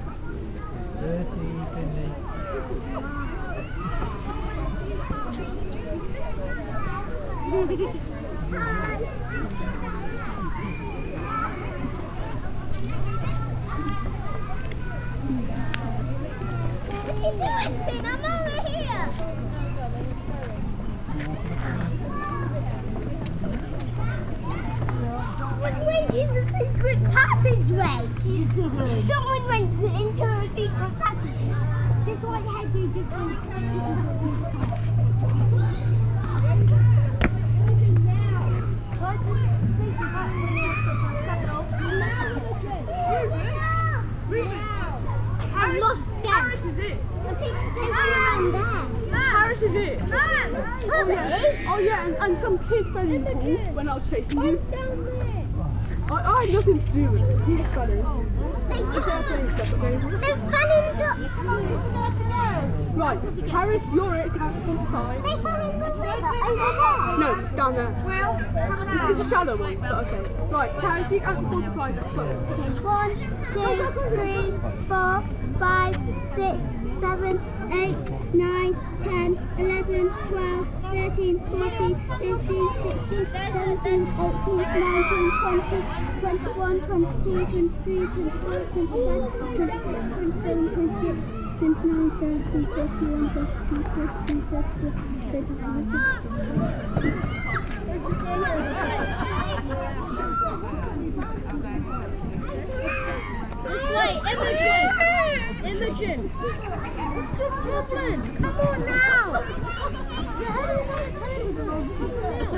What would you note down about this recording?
A sunny day in a busy town centre play area.